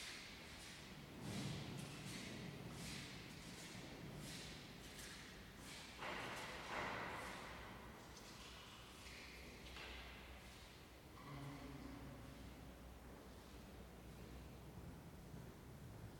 Via Wolkenstein, Bolzano BZ, Italia - 26.10.19 - Chiesa dei Cappuccini, interno
Interno della Chiesa dei Cappuccini. Il sacerdote spegne le candele e prepara la chiusura della Chiesa.
Registrato da Luisa Pisetta
BZ, TAA, Italia, 26 October 2019, ~11:00